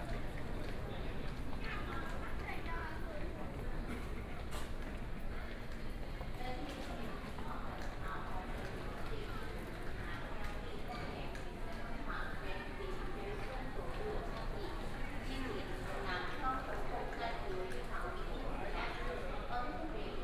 中正區黎明里, Taipei City - soundwalk
Pedestrian, Various shops voices, Walking through the underground mall, Walking through the station
Please turn up the volume a little
Binaural recordings, Sony PCM D100 + Soundman OKM II
Zhongzheng District, Taipei City, Taiwan, 28 February, 15:03